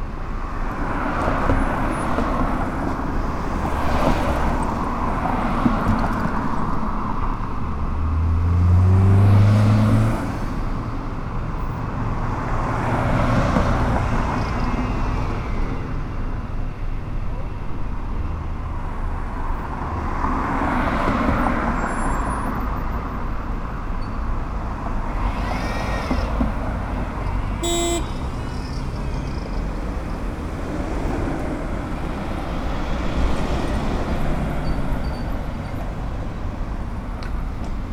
Av. Panorama, Valle del Campestre, León, Gto., Mexico - Bulevar Campestre, en el Parque de Panorama durante el primer día de la fase 3 de COVID-19.
Campestre boulevard in Panorama Park during the first day of phase 3 of COVID-19.
(I stopped to record while going for some medicine.)
I made this recording on April 21st, 2020, at 2:55 p.m.
I used a Tascam DR-05X with its built-in microphones and a Tascam WS-11 windshield.
Original Recording:
Type: Stereo
Esta grabación la hice el 21 de abril 2020 a las 14:55 horas.